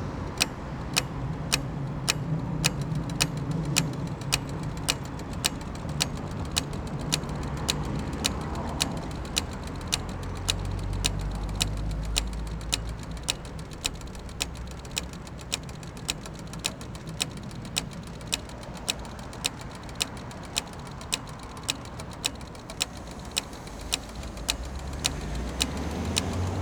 Recorded on Zoom H4n + Rode NTG, 26.10.2015.
26 October 2015, 17:00